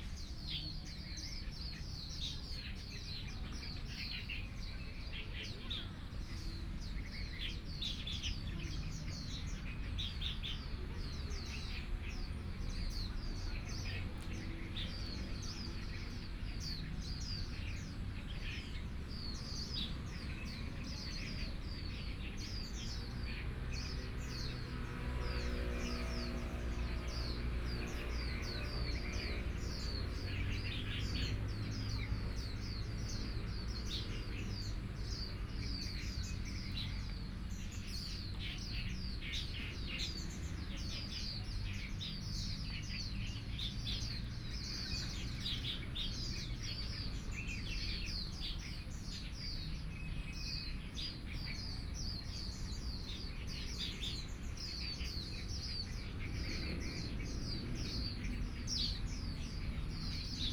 宜蘭運動公園, Yilan City - in the Park
in the Park, Traffic Sound, Birds